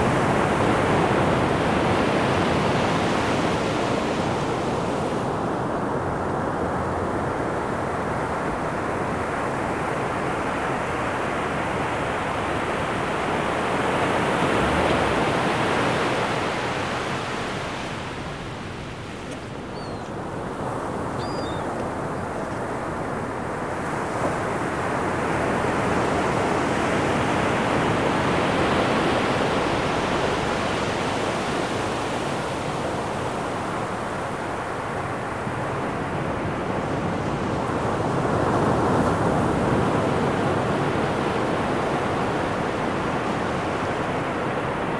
Puerto Lopez, Équateur - Oceano Pacifico